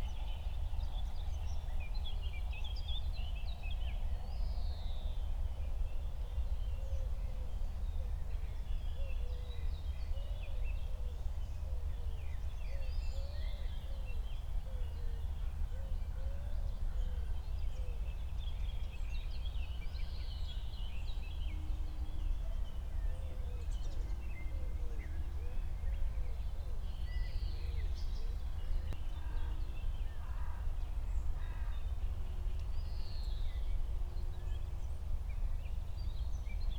Berlin, Buch, Mittelbruch / Torfstich - wetland, nature reserve
08:00 Berlin, Buch, Mittelbruch / Torfstich 1